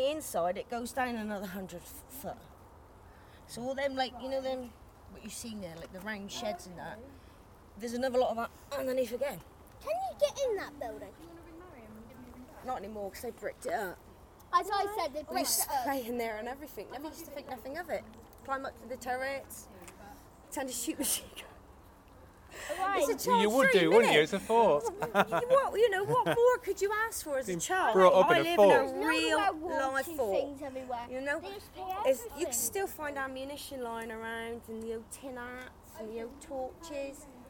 Efford Walk One: About childhood in the fort - About childhood in the fort
Plymouth, UK, 14 September